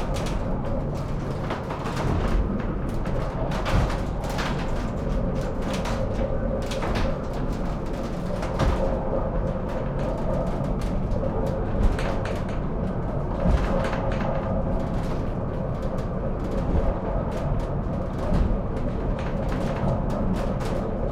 Standseilbahn, Degerloch, Stuttgart - cable car driving down-hill